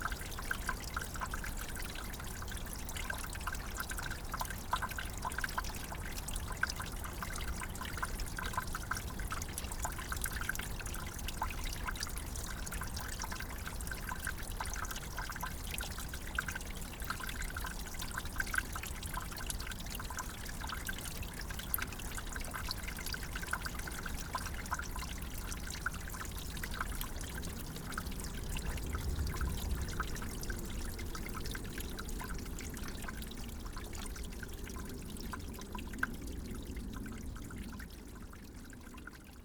Little Stream water under an ice cover, further sounds of a snow storm.
Temp -4°C, 50Km/h Wind from north, little snow fall, alt 1200m
Recording gear : Zoom H6 with DPA 4060 in a blimp (quasi binaural)
Riotord, France, 5 January 2019